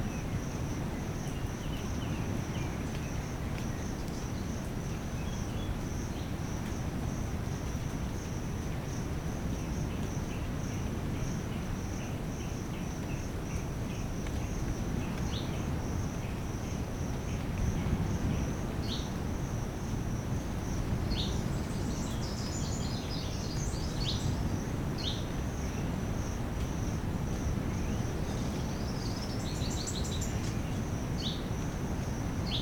Mahale NP, Tanzania - ambiant birds and waves
Recorded on Mini-disc (back in the day!)
December 2006